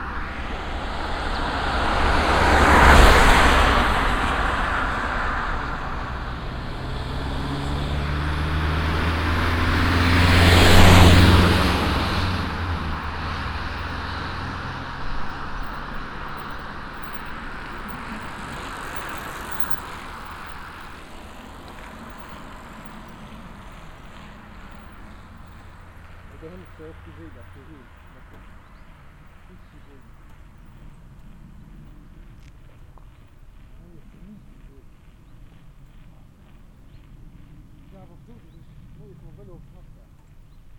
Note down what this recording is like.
At the road. Two cyclist biking uphill get superseded by cars and then pass by. Niklosbierg, Fahrradfahrer und Autos, Auf der Straße. Zwei Fahrradfahrer radeln bergauf und werden von Autos überholt. Niklosbierg, cyclistes et voitures, Sur la route. Deux cyclistes grimpant la colline sont dépassés par des voitures.